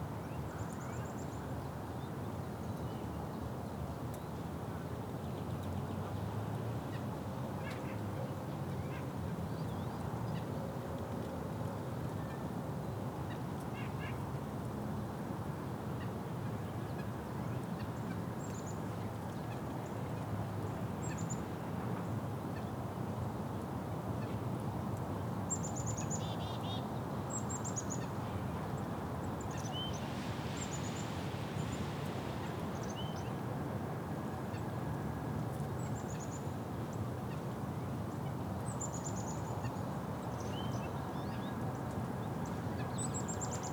Matoska Park - Matoska Park Part 2
The sound of a warm March day at Matoska Park in White Bear Lake, MN